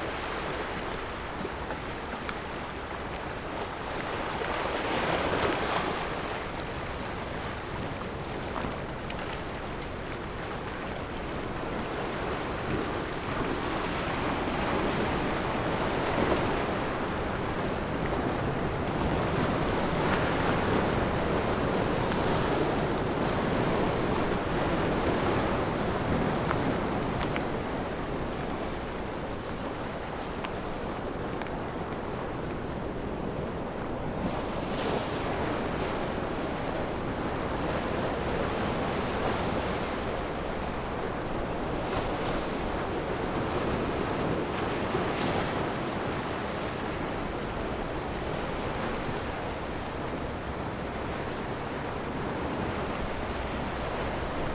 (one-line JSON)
{"title": "萊萊地質區, Gongliao District - waves", "date": "2014-07-29 18:19:00", "description": "Rocks and waves\nZoom H6 MS+ Rode NT4", "latitude": "25.00", "longitude": "121.99", "timezone": "Asia/Taipei"}